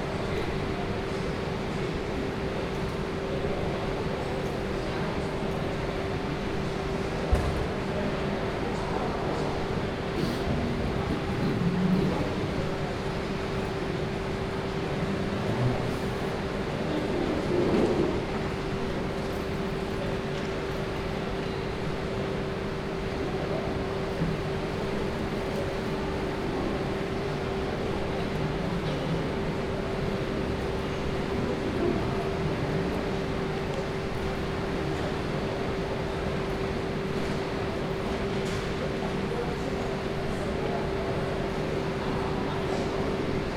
neoscenes: Auckland Intl Airport main terminal